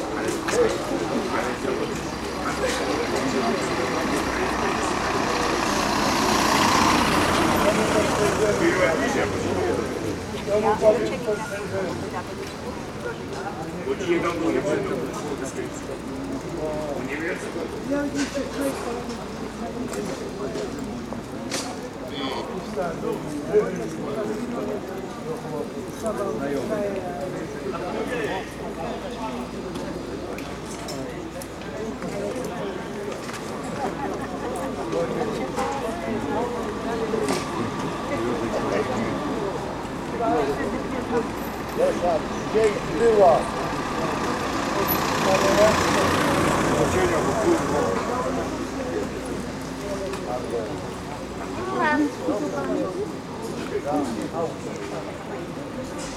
Bytom, Poland - Chat and trade off the market
People hanging around, chatting and trading in a no-vending zone. Binaural recording.
10 August, 11:16